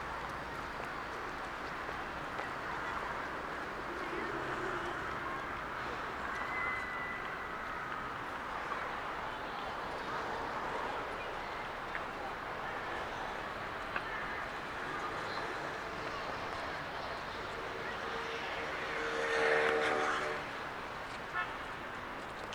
Busan Museum of Modern Art 1